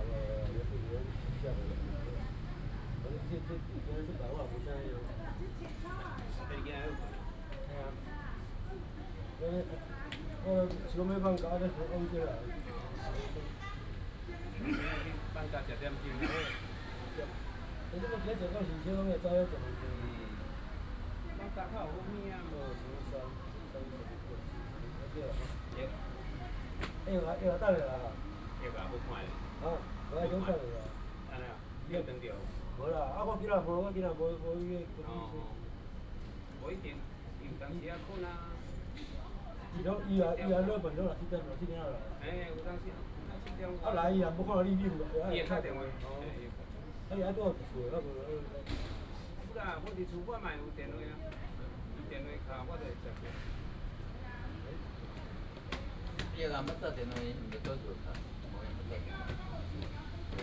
{"title": "敦親公園, Da'an Dist. - Hot weather", "date": "2015-06-28 17:40:00", "description": "Group of elderly people in the park, Hot weather", "latitude": "25.02", "longitude": "121.54", "altitude": "16", "timezone": "Asia/Taipei"}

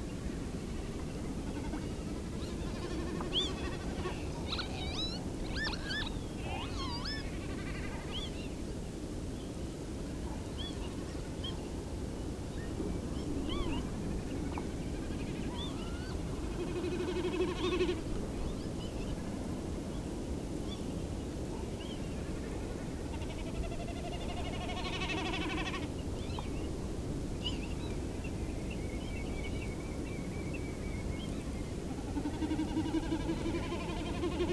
{"title": "Loch Gruinart House, Gruinart, Isle of Islay, United Kingdom - Cacophony leads to drumming snipe", "date": "2018-06-01 17:29:00", "description": "A great evening on the Gruinart Reserve with lots going on including the extraordinary drumming snipe displays: an auditory treat. Recorded to Olympus LS11 from a Brady Reflector Dish with stereo Brady omnis. Part of the Wildlife Sound Recording Society field trip to Islay. An excellent week.", "latitude": "55.82", "longitude": "-6.33", "altitude": "2", "timezone": "Europe/London"}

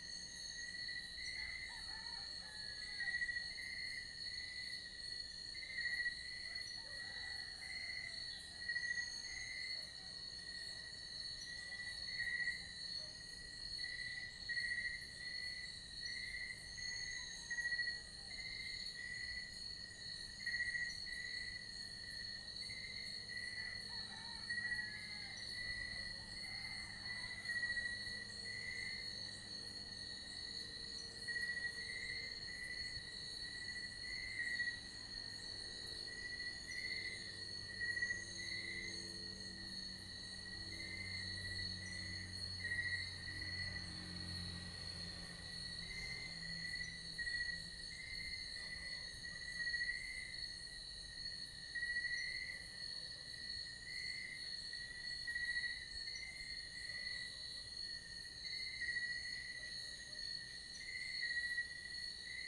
{"title": "Iruhin East, Tagaytay, Cavite, Filippinerna - Tagaytay Iruhin East Valley #2", "date": "2016-07-17 03:11:00", "description": "Sounds captured some hours before dawn by the valley along Calamba Road between Tagaytay Picnic Grove and People´s Park in the Sky. Birds, insects, lizards, roosters waking up and dogs barking. Less traffic by this hour of late night/early morning. WLD 2016", "latitude": "14.13", "longitude": "121.01", "altitude": "603", "timezone": "Asia/Manila"}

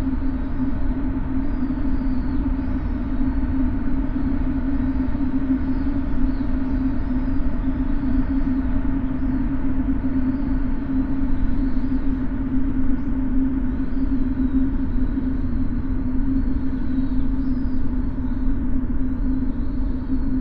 {"title": "kyu shiba-rikyu gardens, tokyo - holes, stone", "date": "2013-11-18 16:08:00", "latitude": "35.65", "longitude": "139.76", "timezone": "Asia/Tokyo"}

